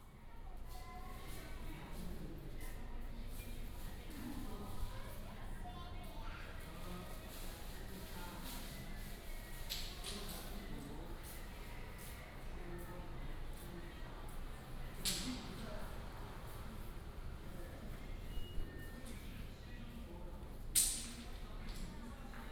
竹北天后宮, Zhubei City - In the temple
In the temple